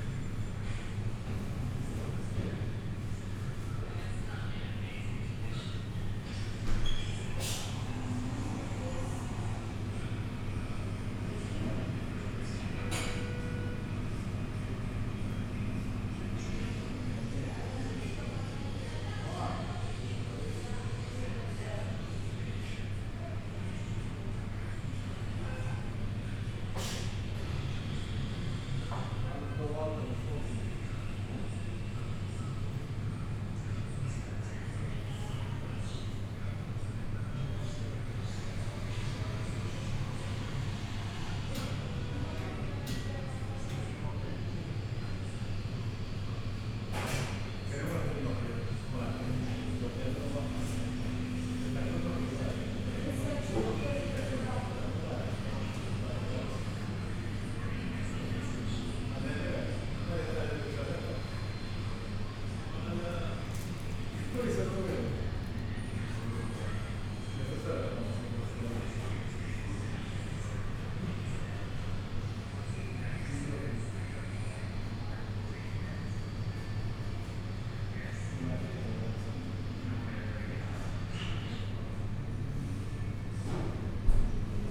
Freeport, Birżebbuġa, Malta - Freeport administration cantina
Freeport administration building, cantina
(SD702, DPA4060)